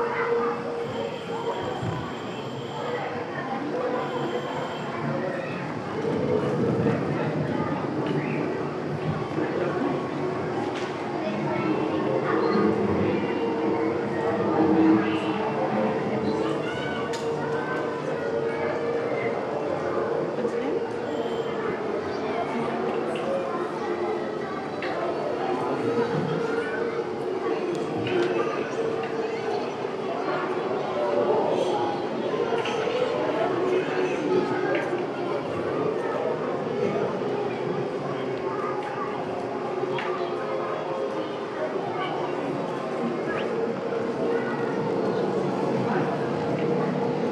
{"title": "Calle Dietro Il Paludo, Venezia VE, Italien - Venice Biennale - Belgic Pavillion - video installation", "date": "2022-07-11 12:00:00", "description": "At the venice Biennale 2022 - inside the exhibition of the belgic pavillion showing the video installation \"the nature of the game\" by Francis Alÿs. The sound of children voices performing different games out of several different countries plus visitors in the crowded exhibition hall.\ninternational enviroments and sound- and art scapes", "latitude": "45.43", "longitude": "12.36", "altitude": "4", "timezone": "Europe/Rome"}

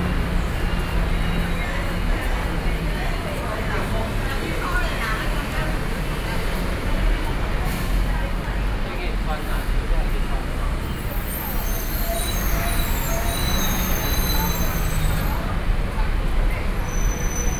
{"title": "New Taipei City, Taiwan - Bus Transfer Station", "date": "2012-10-31 20:17:00", "latitude": "25.01", "longitude": "121.46", "altitude": "14", "timezone": "Asia/Taipei"}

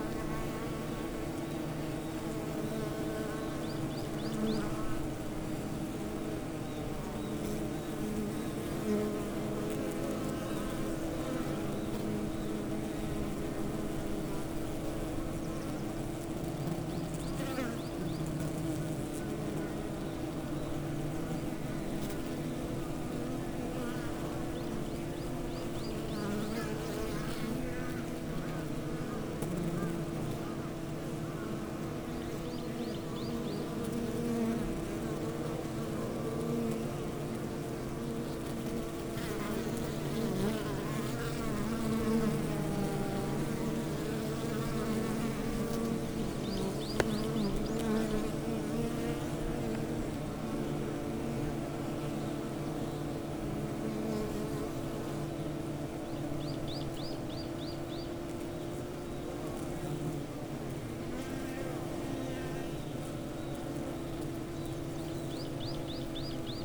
{"title": "퇴골계곡 꿀벌집들 Taegol valley apiary undisturbed bees", "date": "2020-04-03 10:00:00", "description": "퇴골계곡 꿀벌집들_Taegol valley apiary_undisturbed bees_", "latitude": "37.93", "longitude": "127.64", "altitude": "227", "timezone": "Asia/Seoul"}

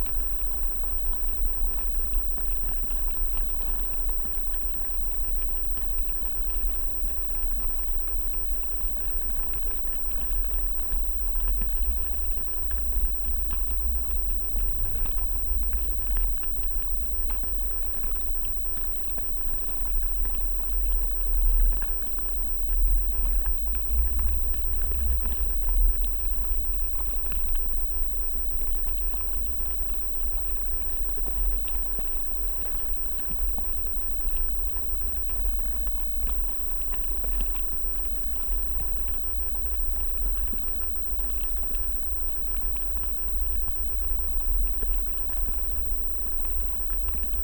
{"title": "Utena, Lithuania, snowflakes on soviet barrack", "date": "2018-12-01 15:20:00", "description": "snowflakes fall on abandoned soviet army building. recorded with two contact mics and electromagnetic antenna priezor", "latitude": "55.51", "longitude": "25.64", "altitude": "135", "timezone": "GMT+1"}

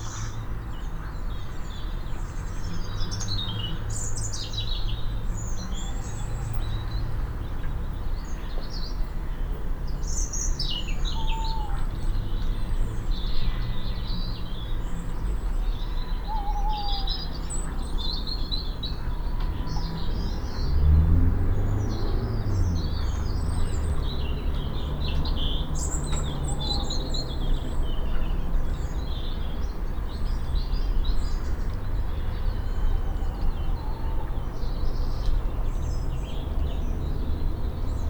{"title": "Pergola, Malvern, UK - Early Morning Ambience", "date": "2021-09-17 05:02:00", "description": "5am, the first birds and the last owls, shots, ducks land and take off, traffic begins.", "latitude": "52.08", "longitude": "-2.33", "altitude": "120", "timezone": "Europe/London"}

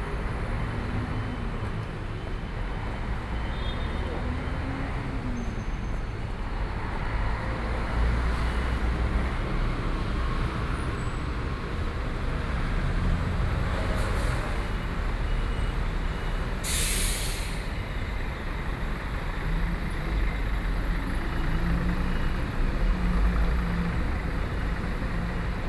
{"title": "Fuxing N. Rd., Taipei City - To MRT station", "date": "2014-04-03 12:57:00", "description": "Walking in the To MRT station, Traffic Sound, Walking towards the South direction", "latitude": "25.06", "longitude": "121.54", "altitude": "19", "timezone": "Asia/Taipei"}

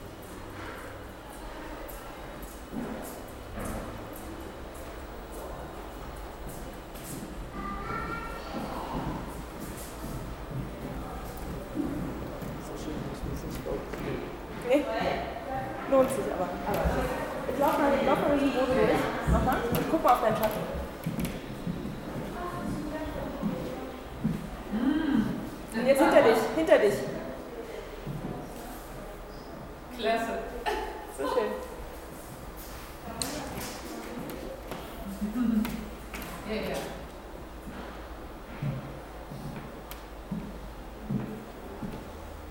{"title": "Düsseldorf, NRW Forum, exhibition preview - düsseldorf, nrw forum, exhibition preview", "date": "2009-08-19 13:00:00", "description": "steps and talks while an exhibition preview\nsoundmap nrw: social ambiences/ listen to the people in & outdoor topographic field recordings", "latitude": "51.23", "longitude": "6.77", "altitude": "41", "timezone": "Europe/Berlin"}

